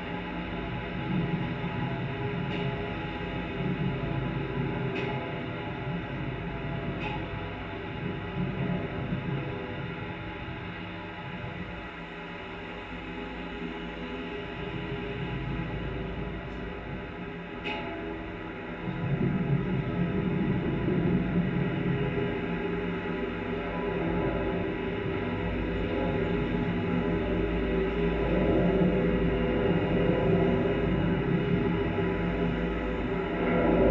Vilnius, Lithuania, metallic stairs at the bridge
metallic staircase for pedestrians on the bridge. contact microphone recording